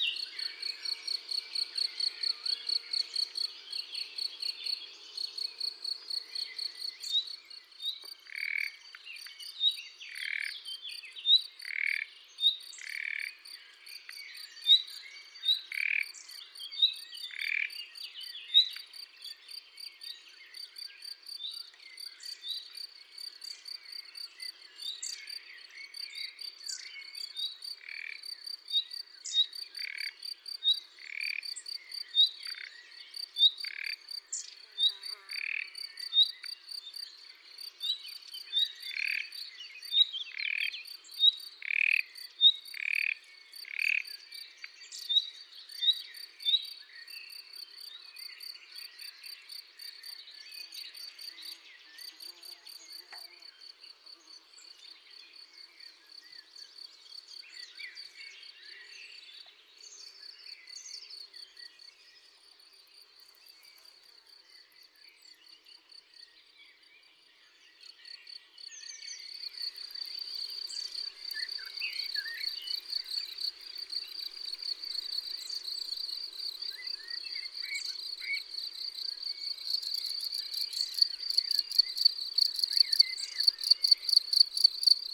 Vollien, Cuzieu, France - printemps dans le Bugey
Lac de Morgnieu, montage sonore
Tascam DAP-1 Micro Télingua, Samplitude 5.1
30 April